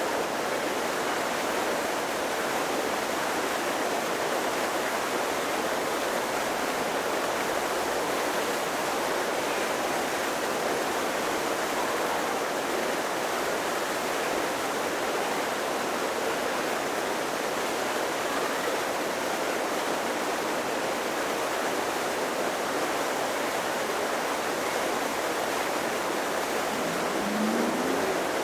E 49th St, New York, NY, USA - Waterfall at 100 UN Plaza
Sounds of the artificial waterfall located at 100 UN Plaza.
New York, United States